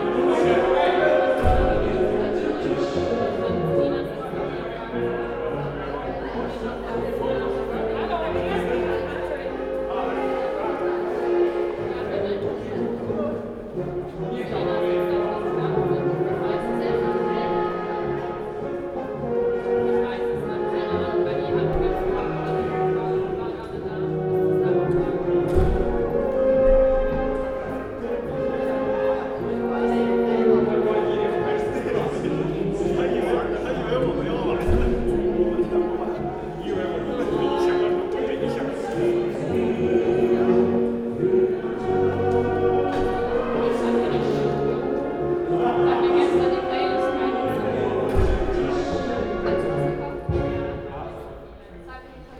Schwäbisch Gmünd, Deutschland - Central Hall of Gmuend Tech University at noon
Central of Gmuend Tech University at noon, promotional event for first semester party
Schwäbisch Gmünd, Germany, 12 May 2014, 12:30